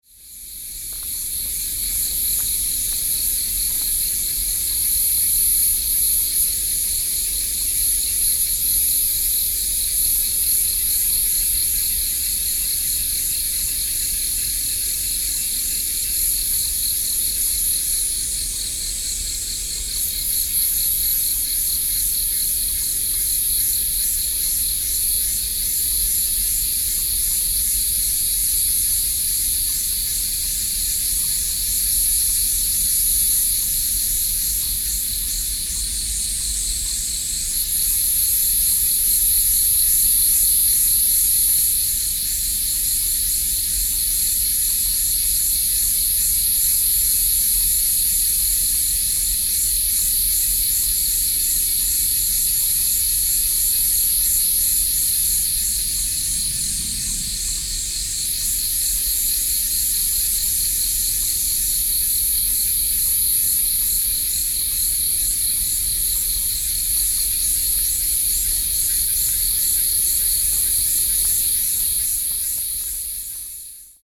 Beitou, Taipei - Cicadas
Cicadas, Frogs calling, Sony PCM D50 + Soundman OKM II
北投區, 台北市 (Taipei City), 中華民國, 22 June 2012